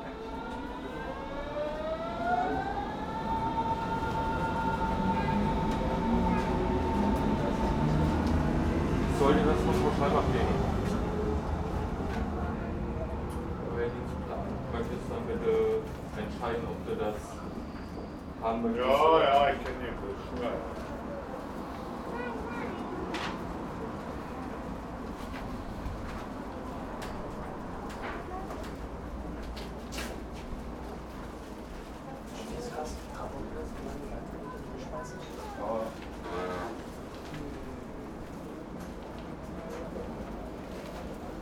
Berlin Ostkreuz - waiting booth
berlin ostkreuz, waiting booth, station ambience
Berlin, Deutschland